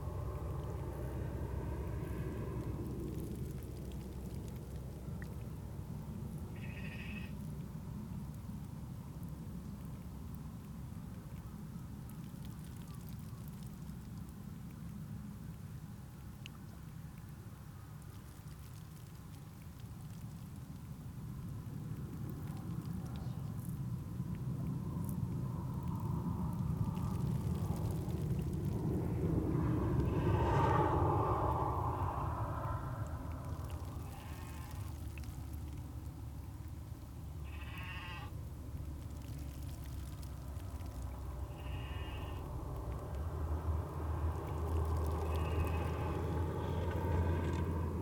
One of the things I have learnt in Shetland is that many crofters and farmers still supplement the diet that Shetland sheep enjoy on land with seaweed from the shoreline. At different points in the year either the seaweed is gathered in for the sheep, or they make their way down to the shore to eat the seaweed and though doing to glean some much-needed minerals for their diet. I have heard several accounts in the Tobar an Dualchais archives which refer to this practice, and Mary Isbister mentioned it to me too, while generously showing me all around the Burland Croft Trail. I was wondering if I might find some sounds which could describe in some way the relationship between seaweed and sheep. While exploring Tommy and Mary Isbister's land, I found that down by the shoreline, the seaweed was making exciting sounds. At each slight swell of the tide, millions of tiny, crackling-type bubbly sounds would rise up in a drift from the swirling wet leaves.